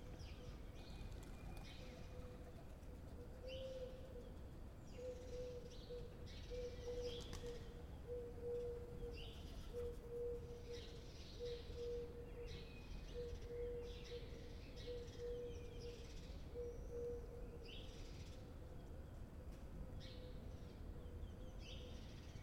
Place du Maréchal Foch, La Rochelle, France - La Rochelle Saturday morning pré-deconfinement?
La Rochelle Saturday morning pré-deconfinement?
it runs this morning at 7 a.m.
4 x DPA 4022 dans 2 x CINELA COSI & rycote ORTF . Mix 2000 AETA . edirol R4pro
25 April 2020, ~07:00